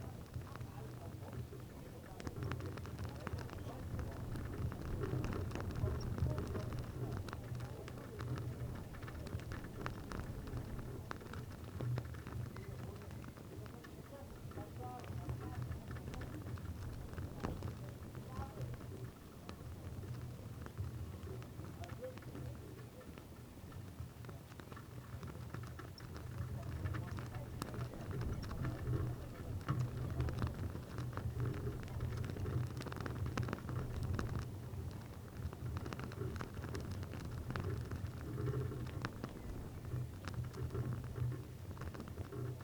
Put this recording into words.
flag fluttering in the wind, the city, the country & me: june 21, 2011